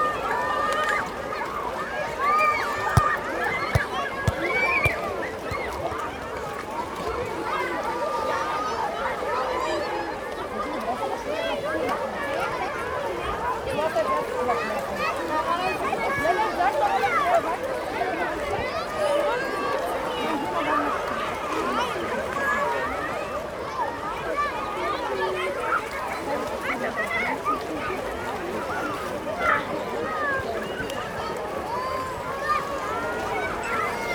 Lots of kids and families on a beautiful hot summer Sunday afternoon.
Berlin, Germany, 2 August 2015